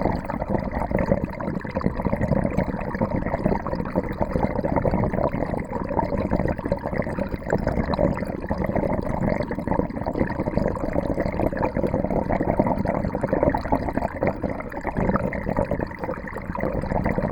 Esch-sur-Alzette, Luxembourg - Dentist mine
In this underground mine called Flora tunnel, water is becoming crazy. In a channel, water drains with a curious dentist sound ! Fascinating but also quite... scary !